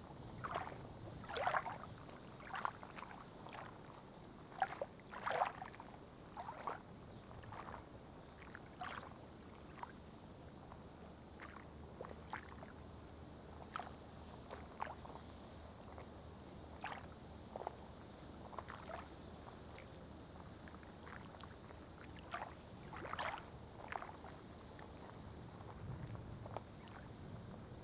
Kirchmöser Ost - Möserscher See, gentle waves
Kirchmöser, Brandenburg, gentle waves lapping at Möserscher See, wind coming from the east
(Sony PCM D50)
Brandenburg, Deutschland, 31 August, 17:34